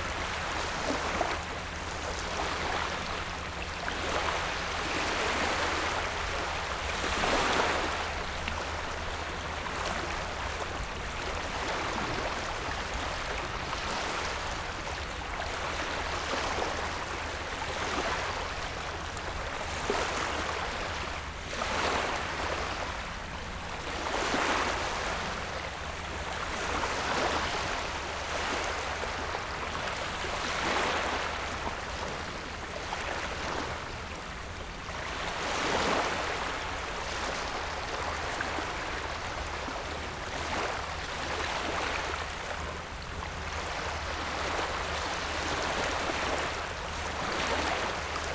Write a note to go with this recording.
Wave and tidal, At the beach, Zoom H6 + Rode NT4